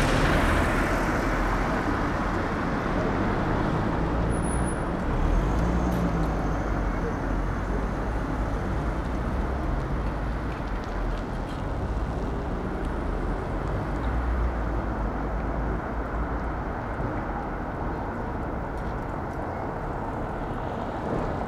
Berlin: Vermessungspunkt Maybachufer / Bürknerstraße - Klangvermessung Kreuzkölln ::: 30.11.2011 ::: 16:24
Berlin, Germany, 30 November 2011, 16:24